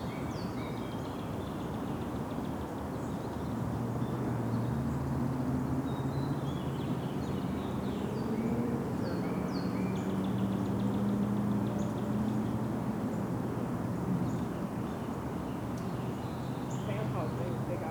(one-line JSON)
{"title": "Suffex Green Ln NW, Atlanta, GA, USA - A Curve In the Road", "date": "2019-02-04 17:32:00", "description": "This recording was made along a bend in the road near a leasing office. The recording features cars and golf carts traveling around the bend, birds, relatively indistinct speech from people walking along one side of the road, and an overhead plane. Recording done with a Tascam Dr-22WL and a dead cat windscreen. Some EQ was applied to cut out rumble in the low end.", "latitude": "33.85", "longitude": "-84.48", "altitude": "287", "timezone": "America/New_York"}